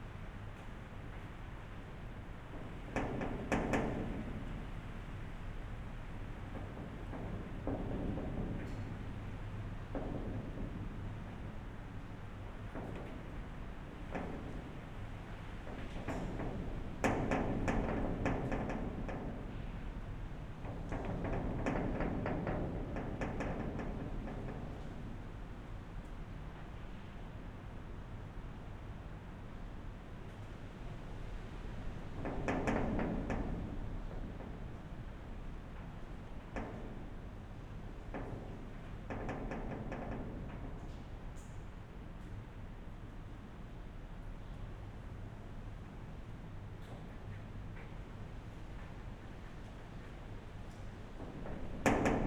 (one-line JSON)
{"title": "Punto Franco Nord, Trieste, Italy - wind moves iron gate", "date": "2013-09-11 15:30:00", "description": "Trieste, punto Franco Nord, abandoned former stables building, wind is moving the iron gate, heard inside the building.\n(SD702, AT BP4025)", "latitude": "45.67", "longitude": "13.76", "altitude": "3", "timezone": "Europe/Rome"}